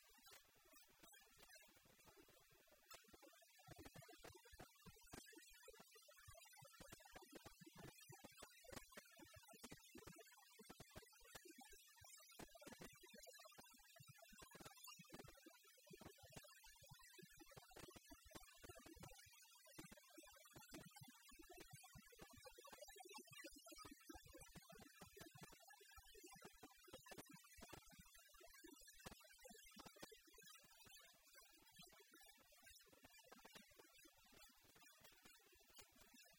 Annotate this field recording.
India, Mumbai, Mahalaxmi Dhobi Ghat, Spin dryer, outdoor laundry